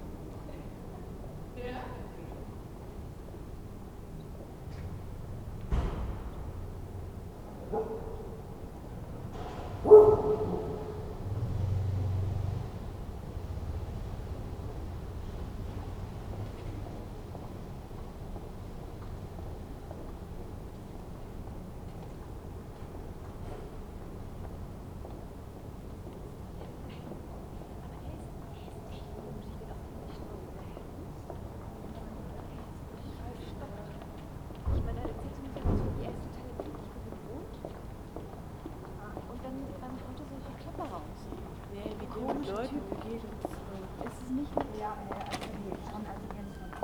Berlin: Vermessungspunkt Friedel- / Pflügerstraße - Klangvermessung Kreuzkölln ::: 19.04.2011 ::: 01:21